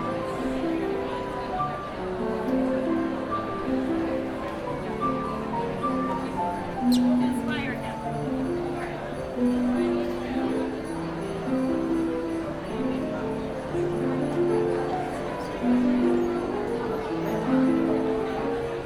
neoscenes: holiday piano for shoppers
Pleasanton, CA, USA, December 2010